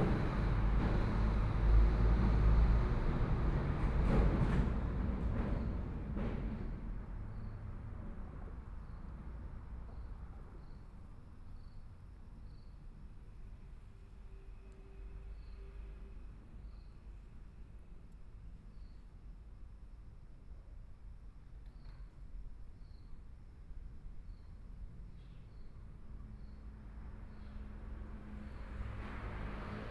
{"title": "五結鄉鎮安村, Yilan County - Trains traveling through", "date": "2014-07-27 14:08:00", "description": "Below the railroad tracks, Hot weather, Traffic Sound, Trains traveling through\nSony PCM D50+ Soundman OKM II", "latitude": "24.71", "longitude": "121.77", "altitude": "9", "timezone": "Asia/Taipei"}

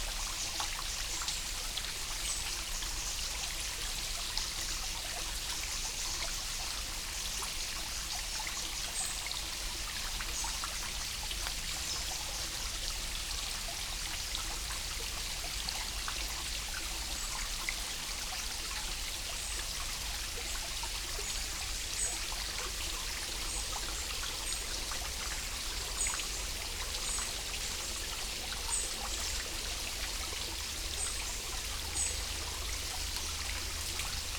{"title": "Šmihel, Šempas, Slovenia - A tributary of a stream Lijak", "date": "2020-10-25 08:18:00", "description": "The Lijak stream comes to light as a karst spring from under a steep funnel wall at the foot of the Trnovski gozd.\nRecorded with Jecklin disk and Lom Uši Pro microphones with Sound Devices MixPre-3 II recorder. Best with headphones.", "latitude": "45.96", "longitude": "13.72", "altitude": "81", "timezone": "Europe/Ljubljana"}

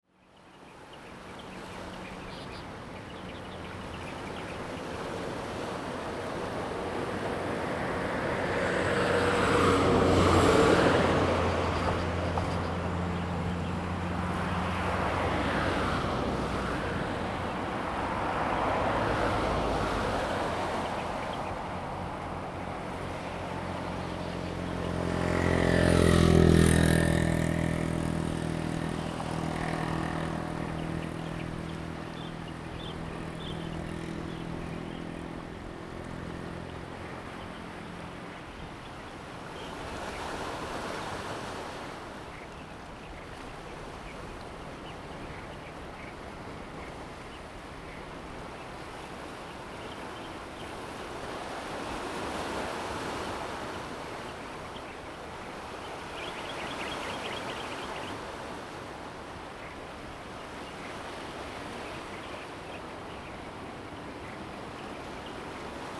{"title": "墾丁路, Hengchun Township - on the coast", "date": "2018-04-23 06:04:00", "description": "On the coast, Sound of the waves, Birds sound, traffic sound\nZoom H2n MS+XY", "latitude": "21.95", "longitude": "120.78", "altitude": "13", "timezone": "Asia/Taipei"}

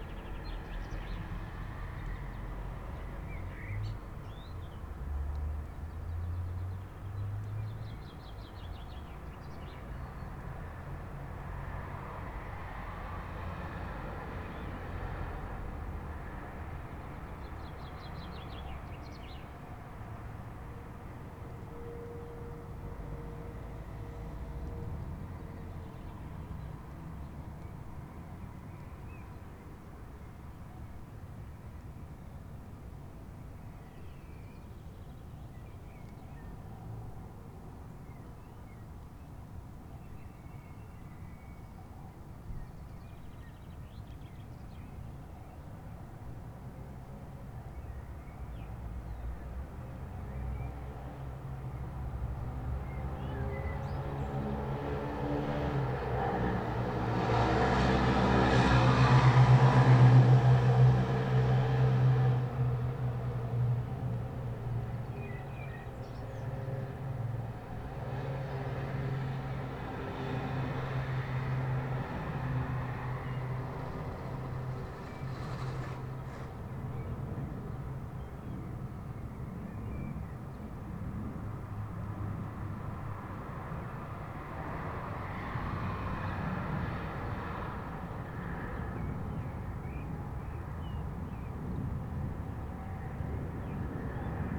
{"title": "wermelskirchen, berliner straße: terrasse - the city, the country & me: terrasse", "date": "2011-06-17 21:29:00", "description": "stupid friday evening traffic, birds\nthe city, the country & me: june 17, 2011", "latitude": "51.14", "longitude": "7.23", "altitude": "312", "timezone": "Europe/Berlin"}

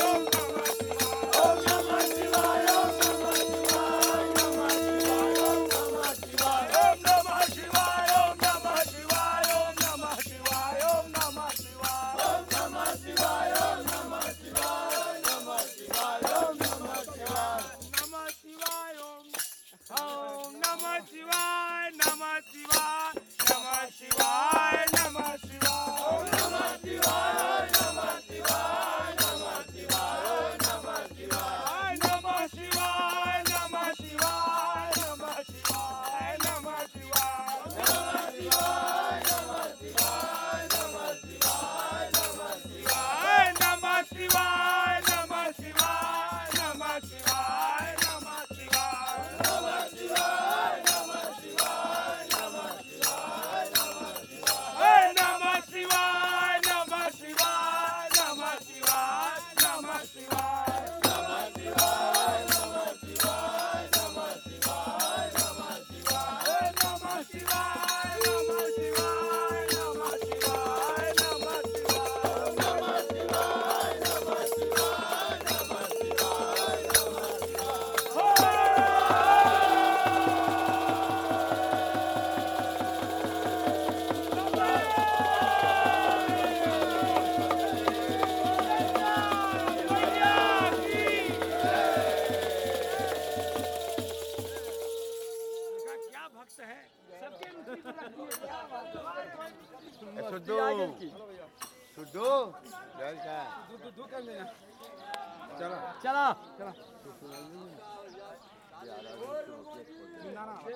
{"title": "Ghats of Varanasi, Chetganj, Varanasi, Uttar Pradesh, Inde - Benares - Morning Parade", "date": "2003-03-07 06:00:00", "description": "Benares\nParade - prière au levée du jour", "latitude": "25.31", "longitude": "83.01", "altitude": "71", "timezone": "Asia/Kolkata"}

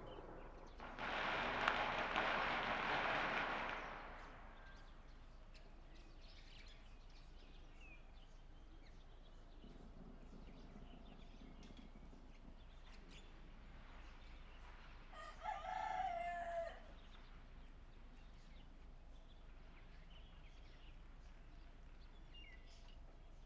{"title": "Shueilin Township, Yunlin - Morning", "date": "2014-02-01 07:04:00", "description": "On the second floor, Neighbor's voice, Early in the morning, Chicken sounds, The sound of firecrackers, Motorcycle sound, Zoom H6 M/S", "latitude": "23.54", "longitude": "120.22", "altitude": "6", "timezone": "Asia/Taipei"}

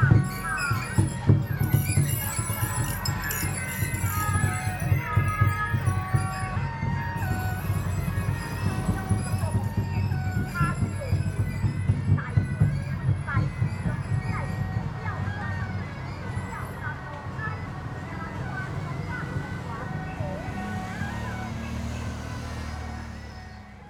{"title": "三芝區新庄里, New Taipei City - Traditional temple festival parade", "date": "2012-06-25 12:47:00", "description": "Traditional temple festival parade\nZoom H4n+Rode NT4 ( soundmap 20120625-37 )", "latitude": "25.27", "longitude": "121.51", "altitude": "31", "timezone": "Asia/Taipei"}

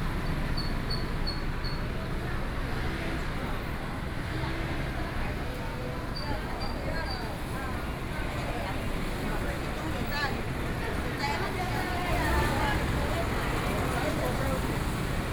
{"title": "Zhonghua Rd., Pingtung City - Walking through the market", "date": "2014-09-04 08:26:00", "description": "Walking in the traditional market", "latitude": "22.68", "longitude": "120.49", "altitude": "29", "timezone": "Asia/Taipei"}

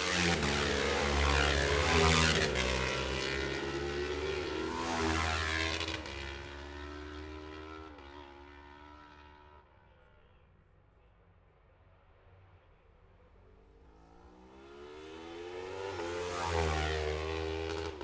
{"title": "Jacksons Ln, Scarborough, UK - olivers mount road racing ... 2021 ...", "date": "2021-05-22 12:11:00", "description": "bob smith spring cup ... twins group A qualifying ... dpa 4060s to MixPre3 ...", "latitude": "54.27", "longitude": "-0.41", "altitude": "144", "timezone": "Europe/London"}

